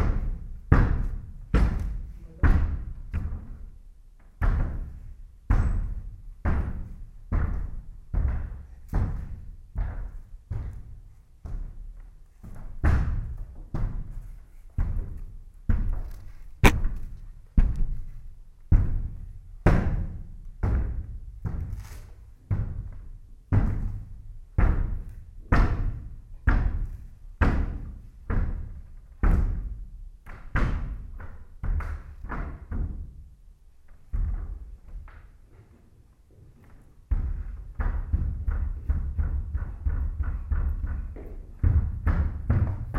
training on stilts in theatrical stage